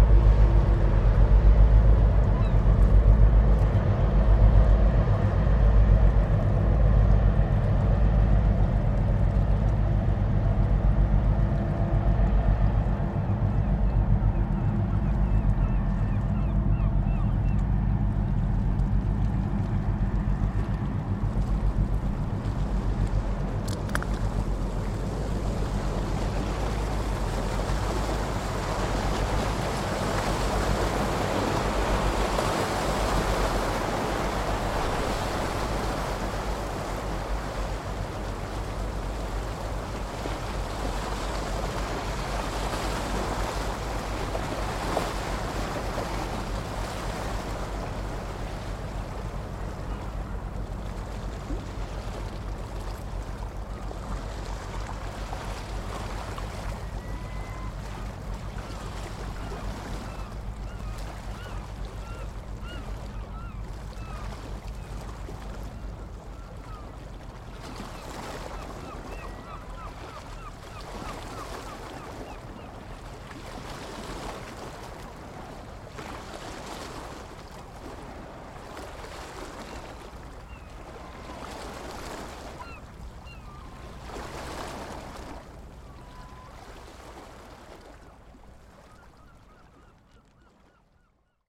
Dunkerque Port Est tugs - DK Port Est tugs
Dunkerque, Port Est, two tugs passing towards the sealock. Zoom H2.